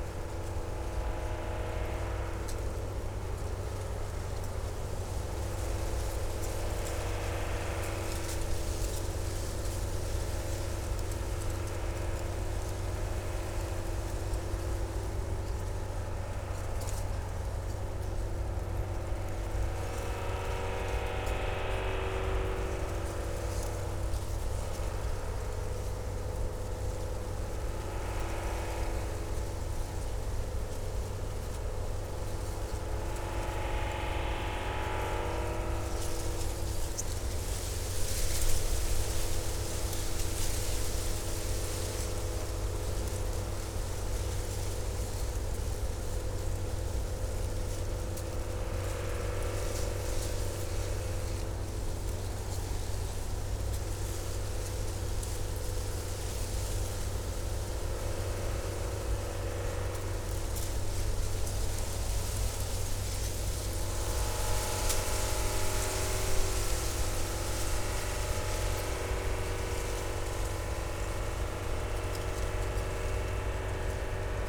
Utena, Lithuania - reeds and motor
whispers amongst the reeds and the distant roar of motor
19 November, 15:15